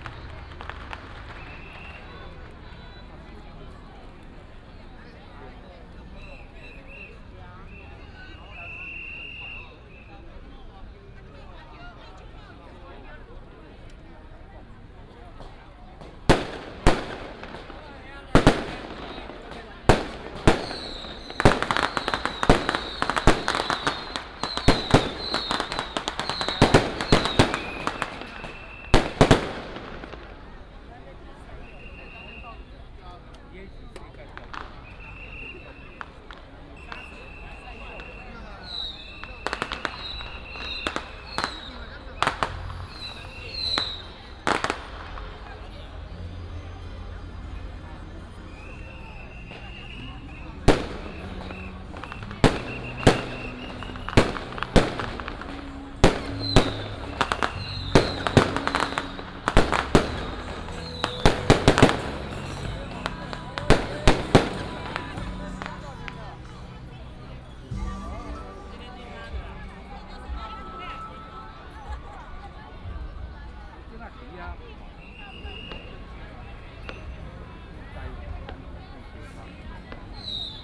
Matsu Pilgrimage Procession, Crowded crowd, Fireworks and firecrackers sound, Traditional temple fair
Miaoli County, Taiwan, 9 March, 09:39